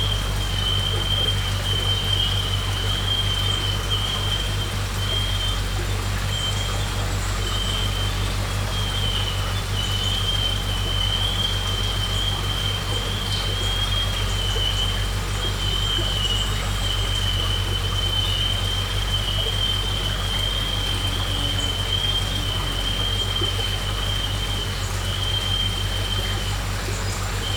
SBG, Font de la Teula - tractor arando
Ambiente en el sendero, a su paso por la fuente de la Teula. Insectos, algunas aves y un sonido peculiar, los chirridos de un tractor que se encuentra arando en un campo cercano.